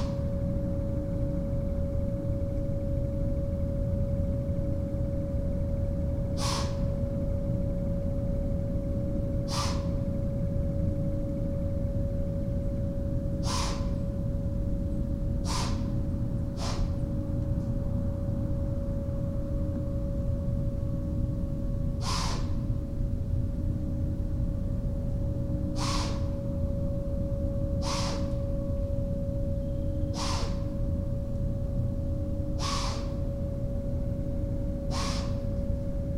Commercial Township, NJ, USA - the sand plant and the deer
Multilayered drones dominate the soundscape of a forest surrounding an operational sand plant. A deer announces its displeasure of my being present halfway through. There is no sound manipulation in this recording.
Millville, NJ, USA, 12 October